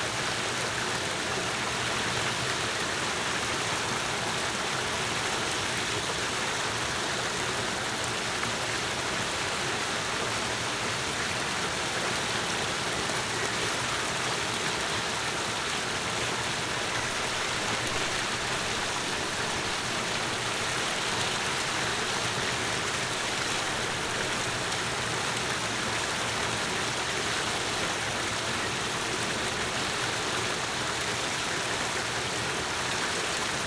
Gutsbezirk Reinhardswald, Deutschland - Steinköhlerpfad Mühlbach01
Gutsbezirk Reinhardswald, Germany, 5 June 2012